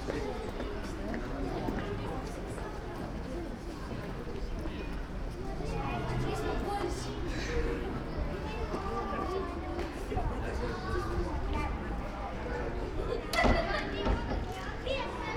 kids and parents waiting in front of a house, other kids come & go. 6pm church bells
(Sony PCM D50, Primo EM172)
Maribor, Slovenia